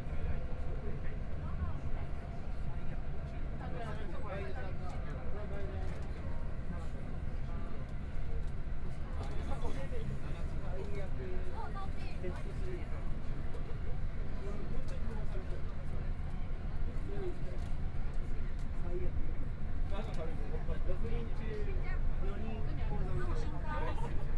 from Qiyan Station to Fuxinggang Station, Clammy cloudy, Binaural recordings, Zoom H4n+ Soundman OKM II
10 February 2014, 17:11